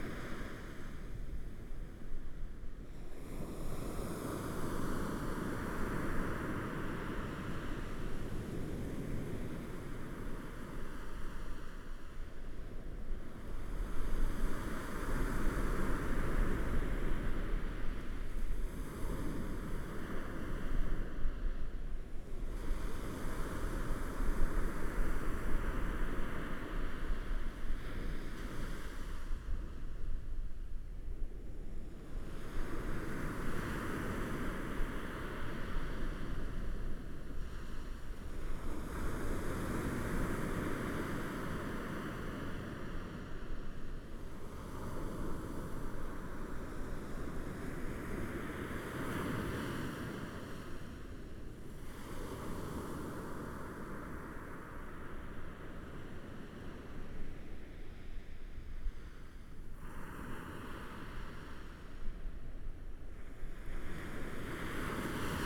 Hualien City, Taiwan - Sound of the waves
Sound of the waves, Zoom H4n+Rode NT4